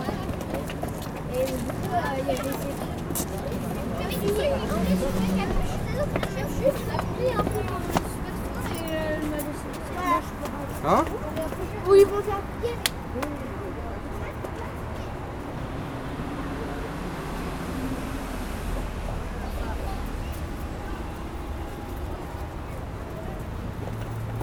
Sèvres, France - Musee de Sèvres station
The "Musée de Sèvres" station. A group of children is climbing the stairs. A train is arriving, people is going inside and the train leaves.
September 2016